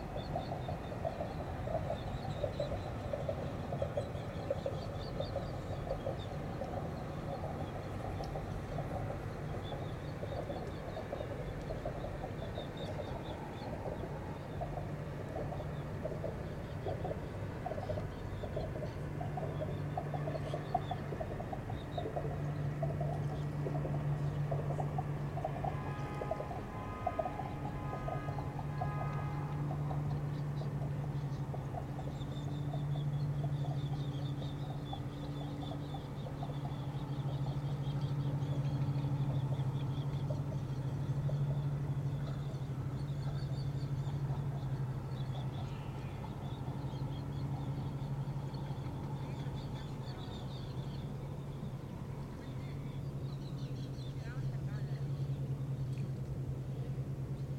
Hunting Island, SC, USA - Hunting Island State Park Pier
The area next to the bridge to Fripp Island, as heard from a fishing pier. The pier is part of hunting Island state park. The ambience is quiet, yet distinct. A series of bumps are heard to the right as cars and trucks pass over the bridge. Birds and other small wildlife can be heard. There were other visitors around, and some people pass very close to the recorder.
[Tascam Dr-100miii & Primo EM-272 omni mics]